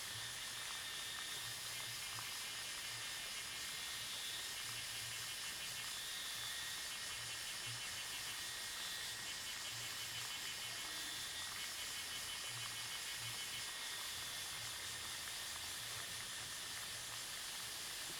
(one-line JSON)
{"title": "白玉瀑布, Jhiben - Cicadas and Insects", "date": "2014-09-04 18:06:00", "description": "Cicadas sound, Insects sound, No water waterfall, Broken water pipes\nZoom H2n MS+XY", "latitude": "22.69", "longitude": "121.02", "altitude": "164", "timezone": "Asia/Taipei"}